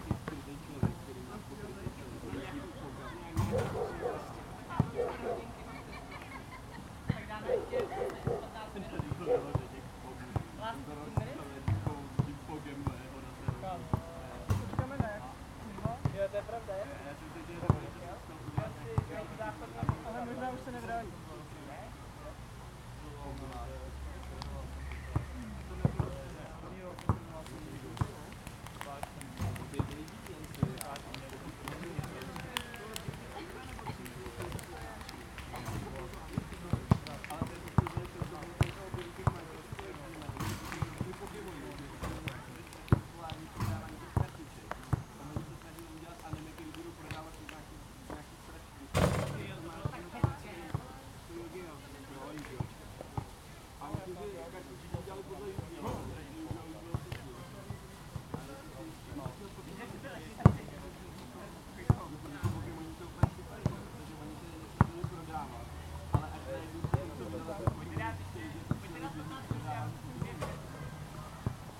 People playing basketball and chatting, fountain in the background.
Zoom H2n, 2CH, held in hand.